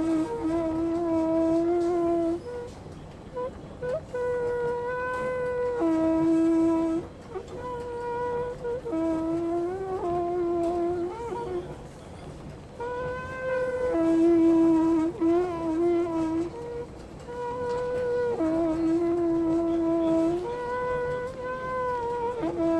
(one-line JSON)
{"title": "Les Halles, Paris, France - la litanie d'un escalator", "date": "2013-07-24 13:01:00", "description": "il crie et tout le monde s'en fout", "latitude": "48.86", "longitude": "2.35", "altitude": "47", "timezone": "Europe/Paris"}